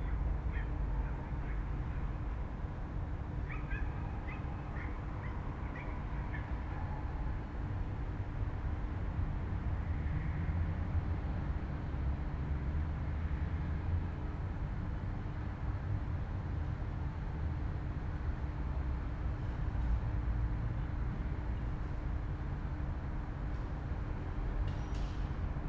{"title": "Mollstr. / Keibelstr., Berlin, Deutschland - downtown residential area, evening yard ambience", "date": "2021-09-09 21:10:00", "description": "building block between Mollstr and Keibelstr, Berlin, inner yard, late summer evening, darkness, some voices, a siren very loud, people walking dogs, distant traffic noise, redundant\n(Sony PCM D50, Primo EM172)", "latitude": "52.53", "longitude": "13.42", "altitude": "42", "timezone": "Europe/Berlin"}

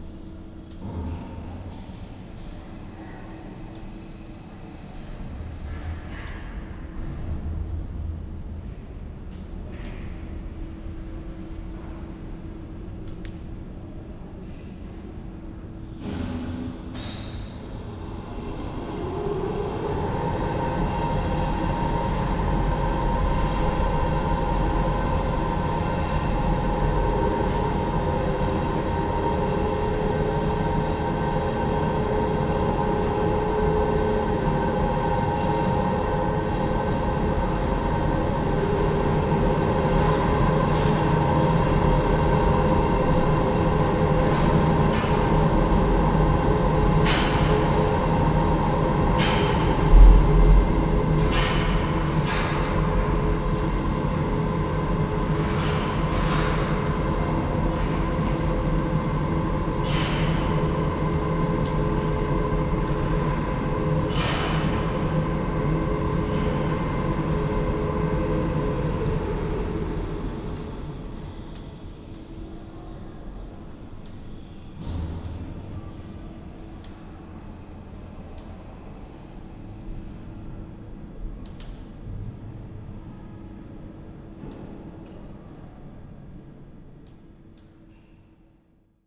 {"title": "Schloßberg, Graz, Austria - elevator at work", "date": "2012-09-02 13:10:00", "description": "ambience within Schlossberg hill, at the elevator station\n(PCM D-50, DPA4060)", "latitude": "47.07", "longitude": "15.44", "altitude": "429", "timezone": "Europe/Vienna"}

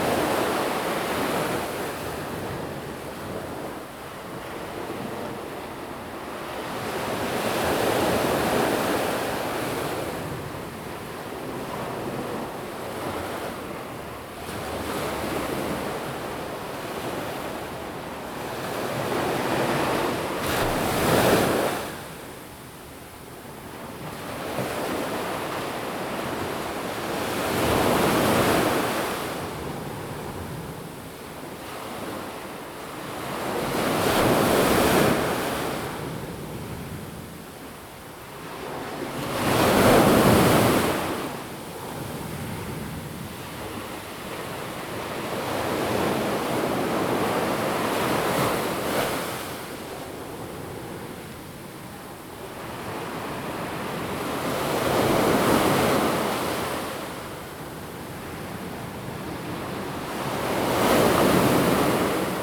{"title": "芝蘭公園, 三芝區後厝里, New Taipei City - the waves", "date": "2016-04-15 07:58:00", "description": "Big Wave, Sound of the waves\nZoom H2n MS+H6 XY", "latitude": "25.25", "longitude": "121.47", "altitude": "4", "timezone": "Asia/Taipei"}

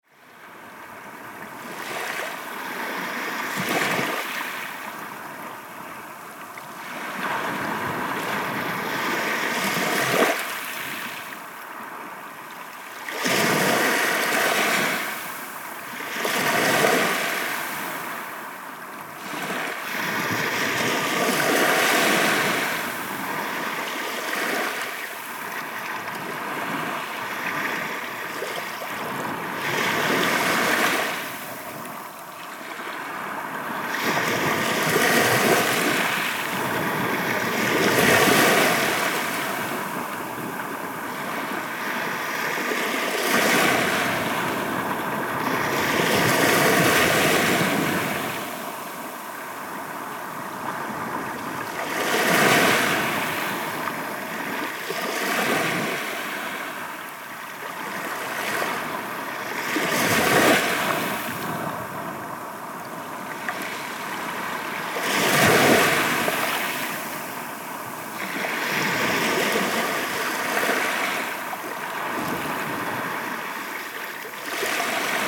{"title": "Sea, pebble beach, White Sea, Russia - Sea, pebble beach.", "date": "2014-06-10 13:40:00", "description": "Sea, pebble beach.\nШум моря, пляж мелкая галька.", "latitude": "65.13", "longitude": "40.03", "altitude": "15", "timezone": "Europe/Moscow"}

